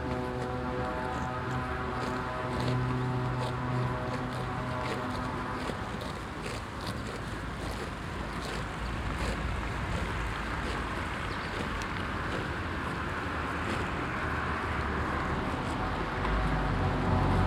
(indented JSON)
{
  "title": "Heinerscheid, Luxemburg - Kalborn, cow herd at main street",
  "date": "2012-08-06 12:10:00",
  "description": "An einem windigen Sommertag, an einer Kuhwiese auf der einen größere Herde weidet. Der Klang der Kuhmünder die Gras fressen und vorbeifahrende Autos an der Hauptstraße. Im Hintergrund Maschinengeräusche aus dem Ort.\nAt a windy summer day near a meadow with a bigger cow herd. The sounds of the cows eating grass and passing by cars. In the distance machine sounds coming from the village.",
  "latitude": "50.10",
  "longitude": "6.11",
  "altitude": "463",
  "timezone": "Europe/Luxembourg"
}